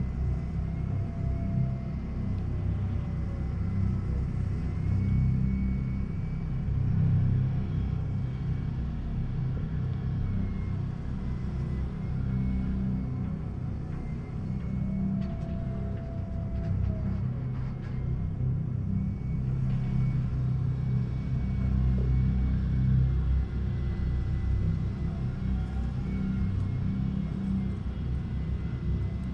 Rubbing Glances - Visit #4
Construction site of the old Military base Molitor
Nancy, France